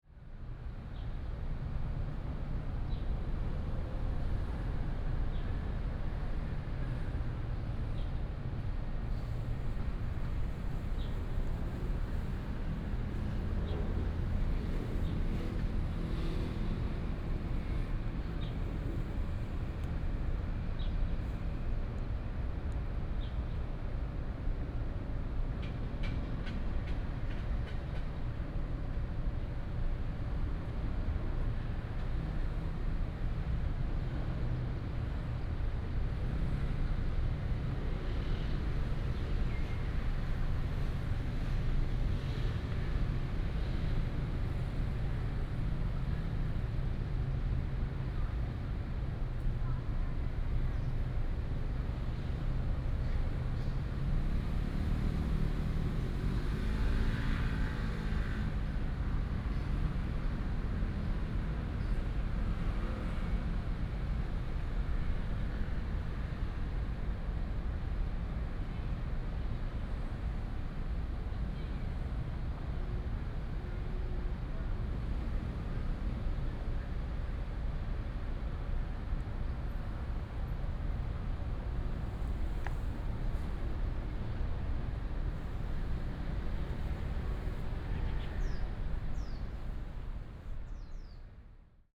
竹北童玩公園, Zhubei City - in the Park
in the Park, Traffic sound, sound of the birds